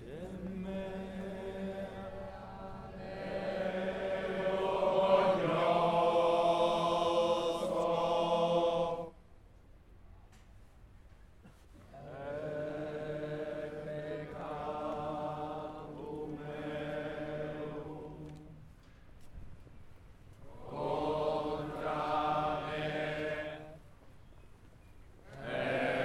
Sant'Agnello, Italie - Black procession of the Easter
At 3 o'clock in the night, more than 200 men walk in the village with the 'Black Madonna'. They move slowly, all the bodies and faces hidden in a black suit, singing and praying.